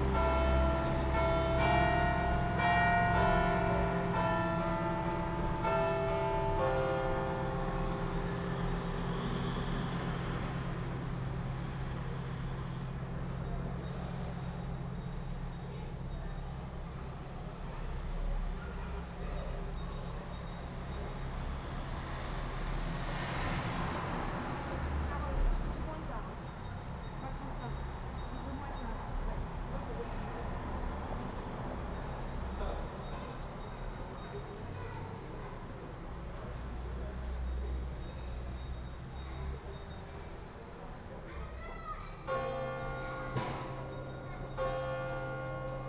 Place de Paris, Vaise - Lyon 9e, France - Cloche de lAnnonciation Lyon Vaise
Volée de cloche de l'église de l'Annociation, Vaise, Lyon 9e arrondissement
5 February 2018, 10:38